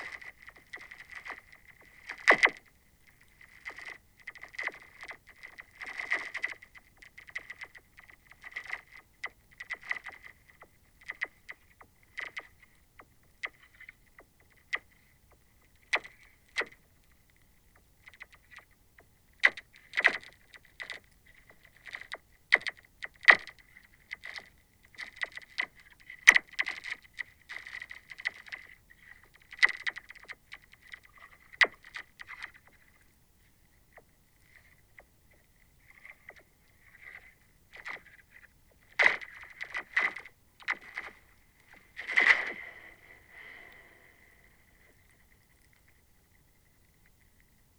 Fobney Island Nature Reserve Reading UK - Cracking Lake Ice
I put a couple of Hydrophones just below the surface of the frozen lake, the ice was roughly 4cm thick and cracked under my weight producing the sounds as I walked on it. Olympus LS10
South East, England, United Kingdom, 13 February 2021, ~09:00